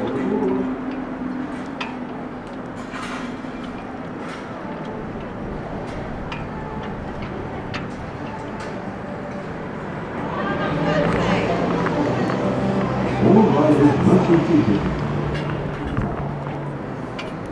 Bournemouth Pier, UK - outside Bournemouth Pier